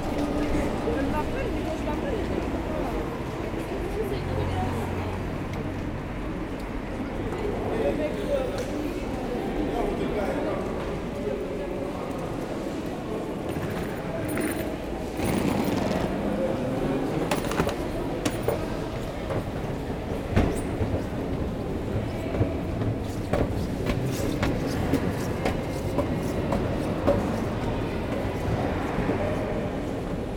Namur, Belgique - Namur station
Namur station. This place is really busy. In order to represent Namur, I had the moral obligation to go there in rush hour, even if possible on a Friday evening. You can hear in this recording the pedestrian crossing, the red light, the buses, the crowd, the escalators, and then the large service corridor. On the platforms, I let several trains leave, before heading home.